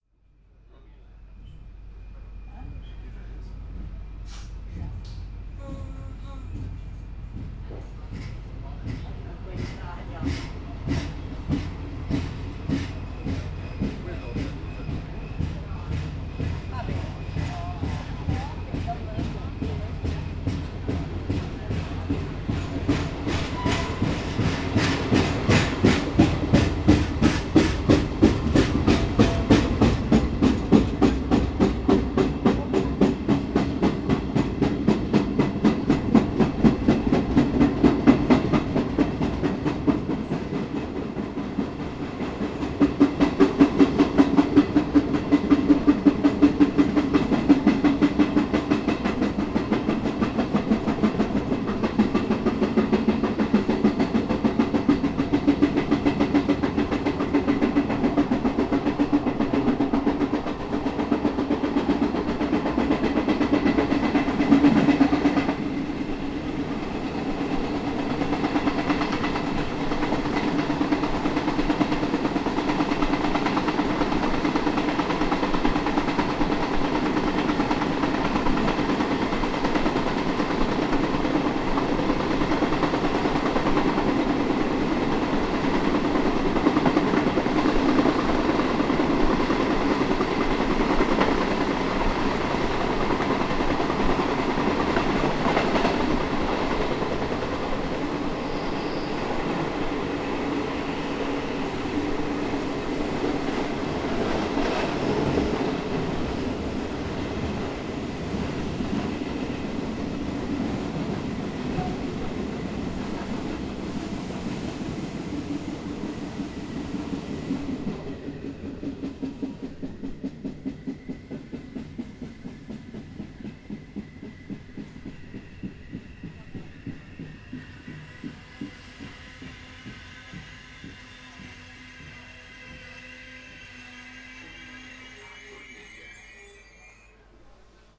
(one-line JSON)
{"title": "Riomaggiore, train tunel towards Corniglia - regional train ride", "date": "2014-05-16 18:26:00", "description": "recording out of the open window of a train ride between Riomaggiore and Corniglia. the volume of the clatter changes rapidly as the walls of the tunnel come closer and farther away from the train.", "latitude": "44.11", "longitude": "9.73", "altitude": "106", "timezone": "Europe/Rome"}